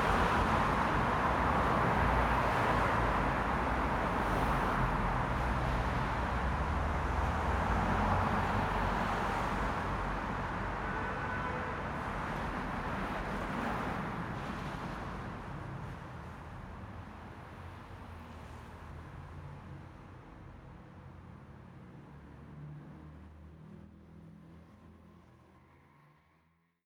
대한민국 서울특별시 서초구 잠원동 반포지하차도 - Banpo Underground Roadway
Banpo Underground Roadway, Tunnel, Cars and Motorcycles passing by
반포지하차도, 자동차, 오토바이
26 July 2019